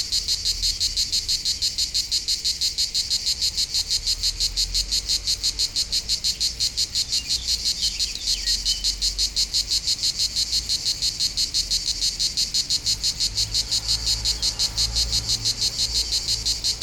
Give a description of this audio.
Par une chaude journée d'été les cigales locales sont en pleine activité au pied du Molard de Vions .